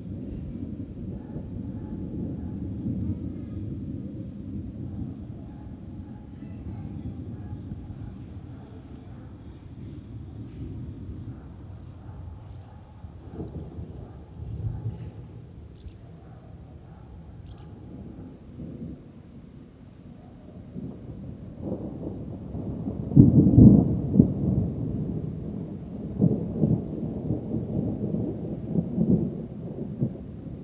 Approaching storm, Mooste Estonia
Summer storm arriving bringing some cooler weather